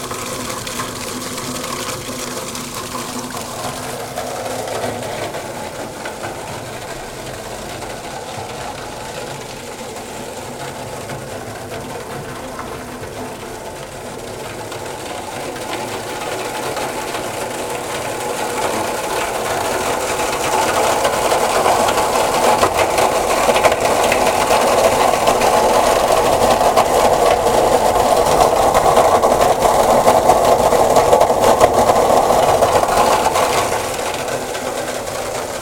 Nova Gorica, Slovenija - Žleb za Bevkovim trgom
The rain gutters furious vomit.
Nova Gorica, Slovenia, 6 June 2017